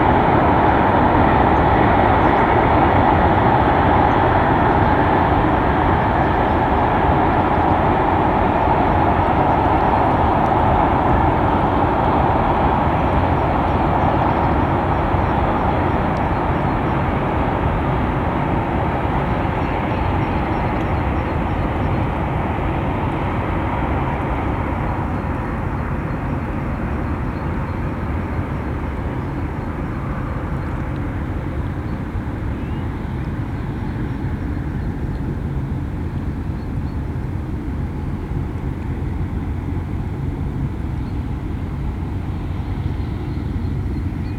Martin-Luther-King-Straße, Bonn, Deutschland - Freight trains at the Rhine
Every few minutes, the on and off swelling sound of freight trains or large cargo ships breaks through the riverside atmosphere on the Rhine and occupies the listening space.
Nordrhein-Westfalen, Deutschland, 29 April 2010, 12:00